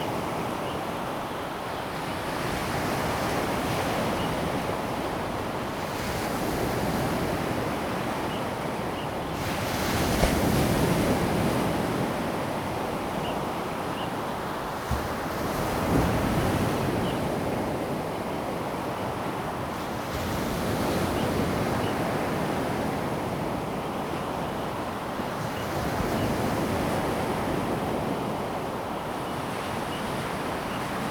南灣, Hengchun Township - At the beach
At the beach, Sound of the waves, Birds sound
Zoom H2n MS+XY